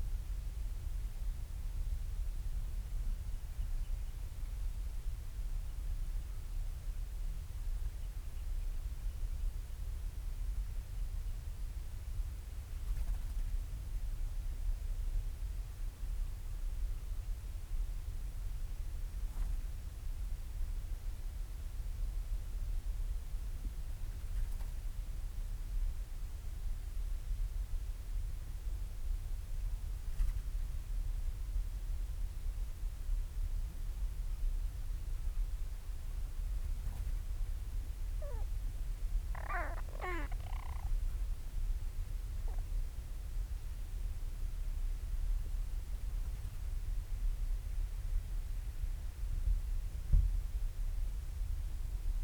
Marloes and St. Brides, UK - european storm petrel ...
Skokholm Island Bird Observatory ... storm petrel calls and purrings ... lots of space between the calls ... open lavalier mics clipped to sandwich box on bag ... calm evening ...